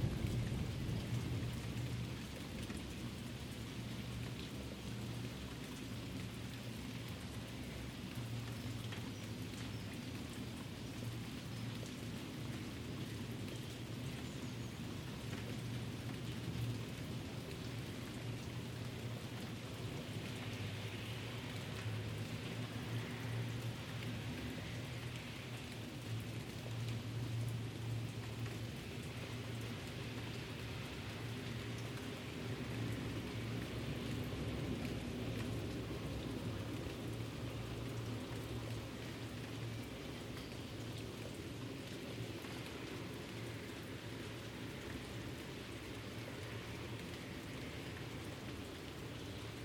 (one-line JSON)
{"title": "Bournemouth, UK - Passing storm and restful rain", "date": "2012-07-11 15:32:00", "description": "Rolling thunder through Bournemouth in the distance and a little light rain, traffic and birdsong in the pleasure gardens.", "latitude": "50.73", "longitude": "-1.90", "altitude": "26", "timezone": "Europe/London"}